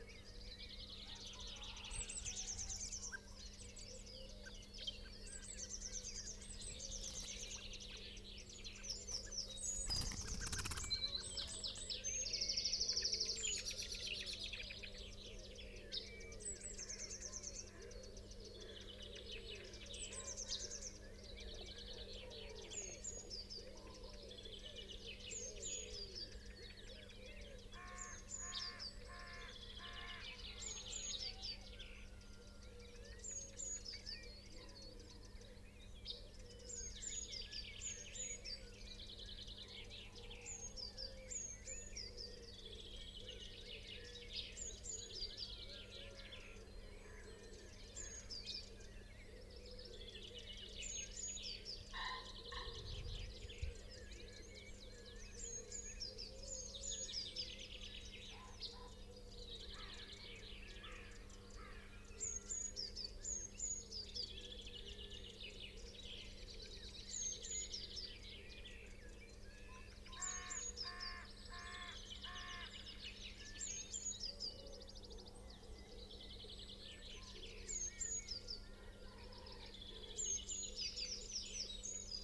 Unnamed Road, Malton, UK - singing in a bush ... mostly wren ...
singing in a bush ... mostly wren ... song and calls from ... wren ... blue tit ... great tit ... blackbird ... robin ... pheasant ... wood pigeon ... collared dove ... crow ... tree sparrow ... lavalier mics clipped to twigs ... background noise ... traffic ... etc ...